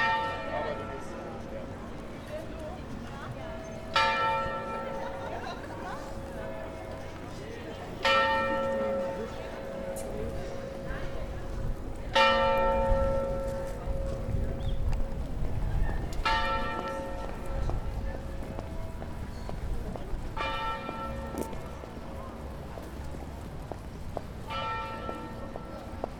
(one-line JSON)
{"title": "frankfurt, fahrtor, bells", "date": "2011-09-01 10:00:00", "description": "bells, silence in the city", "latitude": "50.11", "longitude": "8.68", "altitude": "105", "timezone": "Europe/Berlin"}